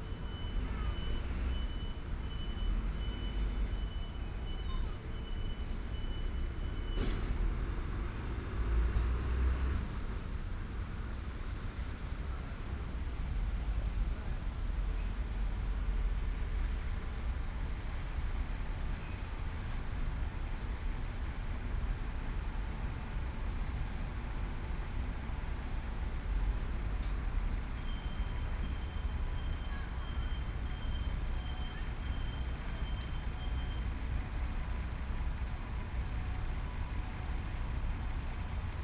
辛亥一號公園, Taipei City - in the Park
Traffic Sound, in the park
Taipei City, Taiwan, 3 July 2015, 19:46